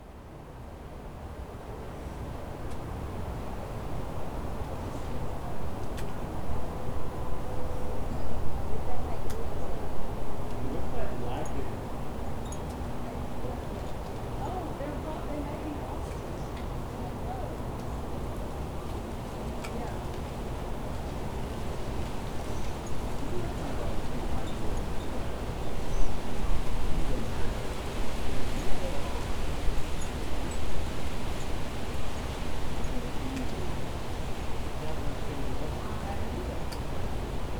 A recording of a beautiful fall day. This was taken from a porch with a Tascam DR-22WL and a windmuff. You can hear leaves, vehicles, people talking by the side of the road, wind chimes, and a few other sounds as well.
Atlanta, GA, USA, 27 November 2019